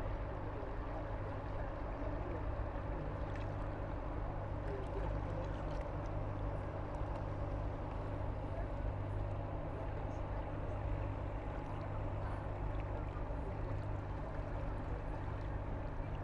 {"title": "Praha 1, Czechia, at Vltava river", "date": "2017-08-14 17:20:00", "latitude": "50.09", "longitude": "14.41", "altitude": "186", "timezone": "Europe/Prague"}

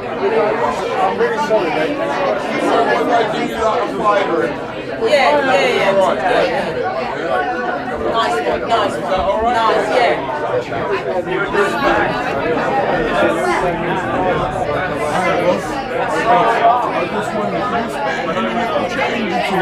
{"title": "Dean Street, Soho, London, Royaume-Uni - French House", "date": "2016-03-15 18:59:00", "description": "Inside a pub (French House), a guy is asking me some change to eat, Zoom H6", "latitude": "51.51", "longitude": "-0.13", "altitude": "34", "timezone": "Europe/London"}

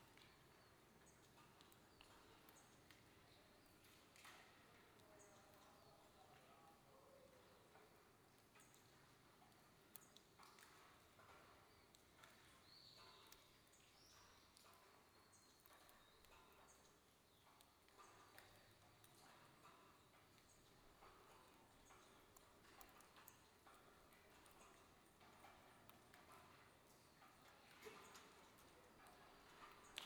{"title": "Buzludzha, Bulgaria, inside - Singing the International", "date": "2019-07-15 11:33:00", "description": "Stephan A. Shtereff is singing the international on the one side of the arena, the microphones are on the other side, he stands before Marx, Engels and Lenin (or what is left of their mosaics), the microphone in front of the leaders of the Bulgarian Communist Party (or what is left of them). After the song the noises of this ruin of socialism become audible again...", "latitude": "42.74", "longitude": "25.39", "altitude": "1425", "timezone": "Europe/Sofia"}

